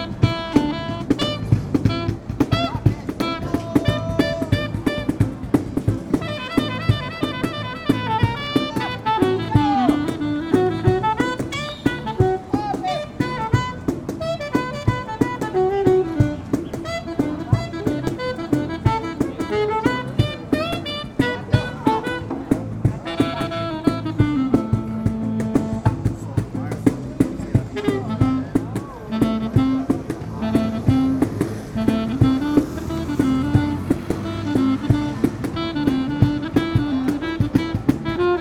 Calçadão de Londrina: Músicos de rua: saxofonista e cajonero - Músicos de rua: saxofonista e cajonero / Street musicians: saxophonist and drawer
Panorama sonoro: Dupla de músicos tocando saxofone e cajon nas proximidades da Praça Marechal Floriano Peixoto. Passagem de um automóvel de propaganda volante anunciando ofertas de lojas e um vendedor informal de café apregoando seu produto. Algumas pessoas em volta acompanhavam a apresentação. Sound panorama: Double of musicians playing saxophone and cajon in the vicinity of Marechal Floriano Peixoto Square. Passage of a flying advertisement automobile announcing offers of stores and an informal coffee vendor hawking its product. Some people around came with the presentation.